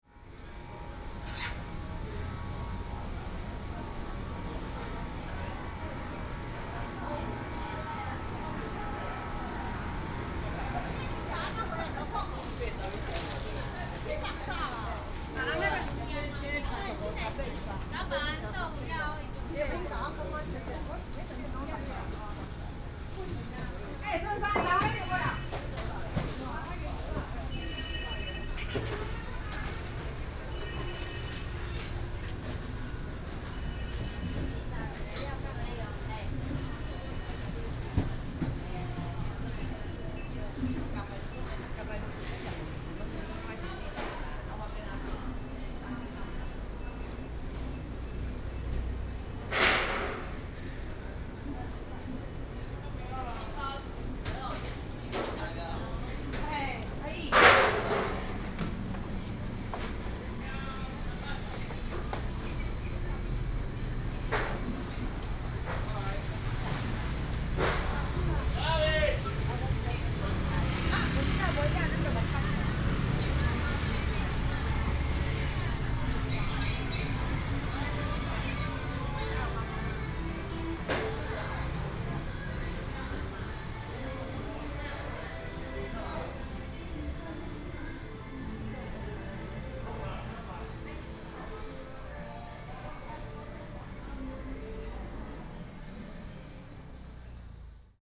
北斗大菜市場, Beidou Township - Walking in the old market
Walking in the old market, Traffic sound, sound of the birds, Most businesses have been resting